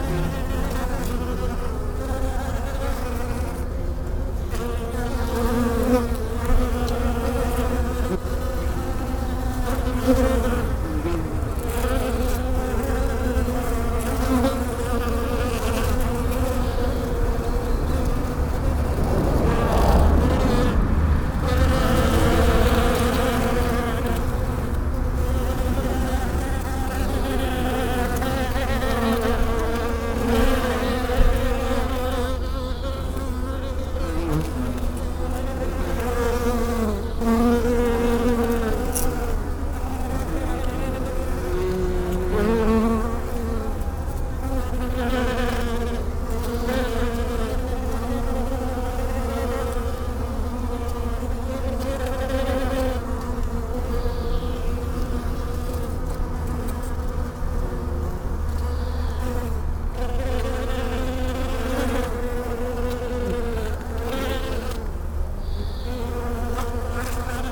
Niévroz, Rue Henri Jomain, bees in the Virginia creeper
A lot of bees on a summer day.
Niévroz, France, 4 July 2011